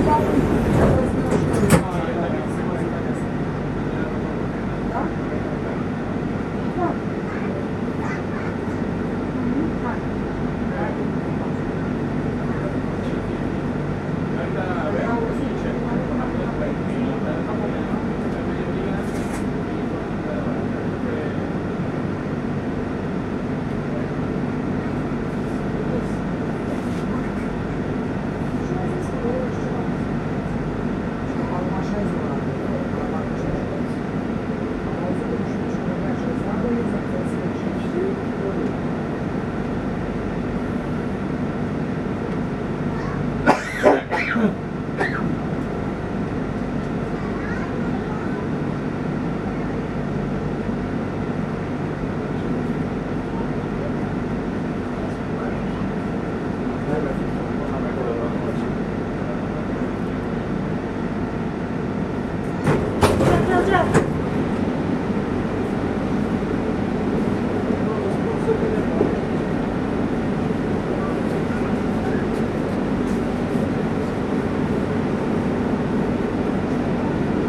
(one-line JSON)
{"description": "Barcelona, Metrofahrt von Liceu nach Sants Estacio, 21.10.2009", "latitude": "41.38", "longitude": "2.17", "altitude": "17", "timezone": "Europe/Berlin"}